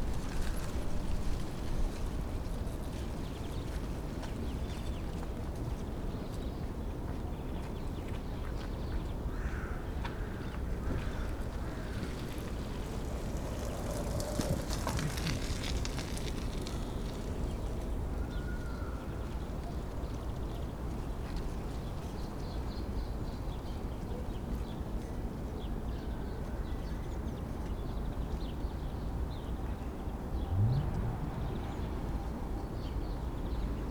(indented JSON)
{
  "title": "berlin: rütlistraße - the city, the country & me: bush, fence and crows",
  "date": "2013-03-18 14:07:00",
  "description": "dry leaves of a bush in the wind, creaking fence, crows\nthe city, the country & me: march 18, 2013",
  "latitude": "52.49",
  "longitude": "13.44",
  "altitude": "35",
  "timezone": "Europe/Berlin"
}